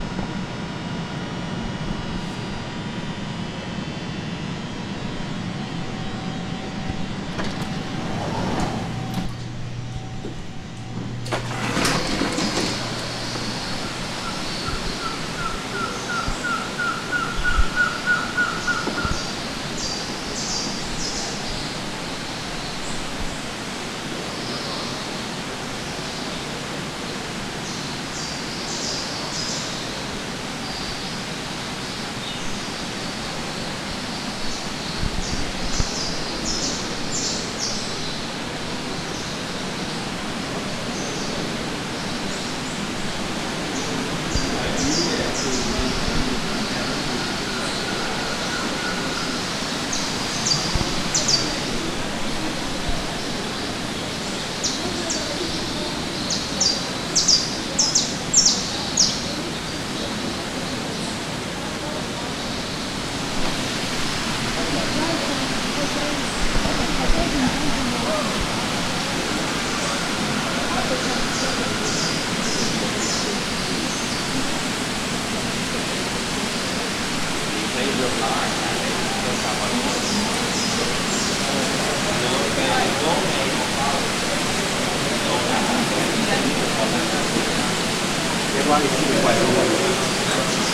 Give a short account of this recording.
equipment used: H4 Zoom Flash Recorder, Entering the biodome and walking toward the bat room